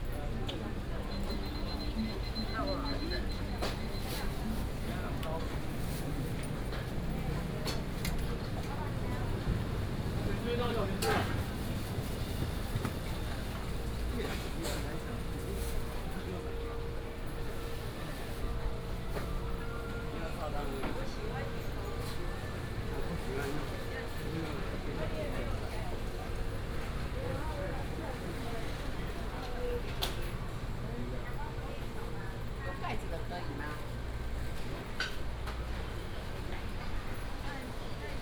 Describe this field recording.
Walking through the Traditional Taiwanese Markets, Traffic sound, vendors peddling, Binaural recordings, Sony PCM D100+ Soundman OKM II